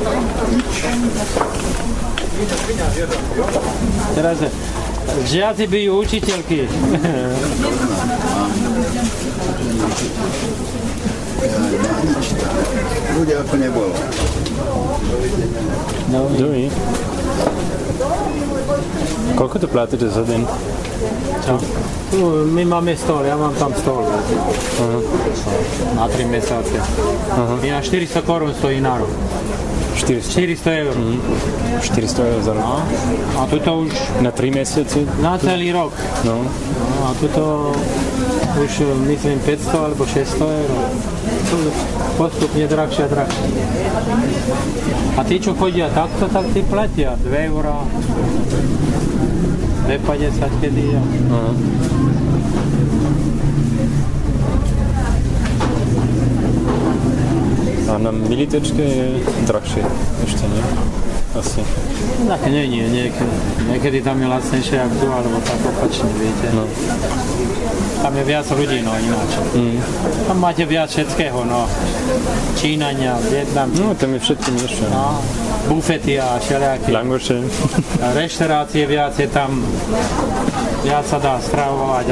vendor explaining how times and people are changing around the marketplace